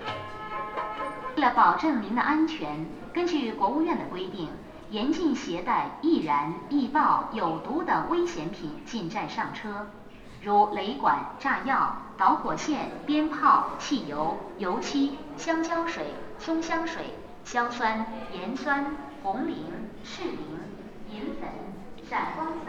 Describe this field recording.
P.A. system in a bus station in Shangdi-La, Deqen, Yunnan, China.